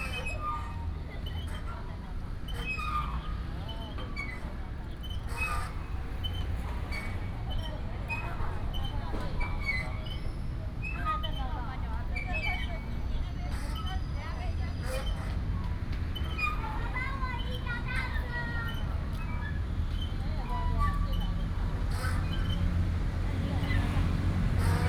Shalun Rd., Tamsui Dist., New Taipei City - Swing
Traffic Sound, Children Playground, Sitting next to the park, Swing
Tamsui District, New Taipei City, Taiwan